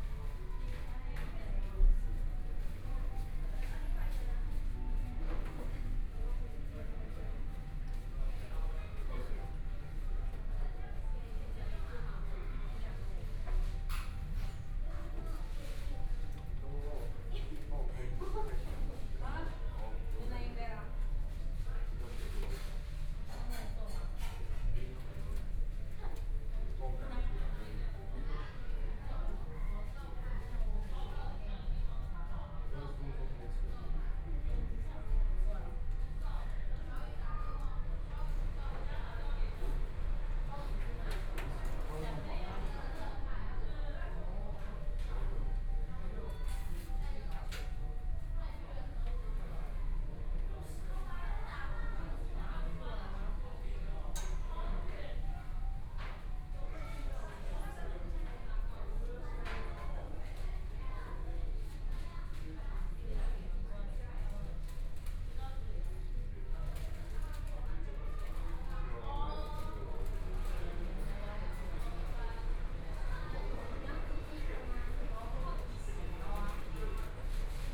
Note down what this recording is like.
in the McDonald's fast food restaurant, Binaural recordings, Zoom H4n+ Soundman OKM II ( SoundMap20140117- 6)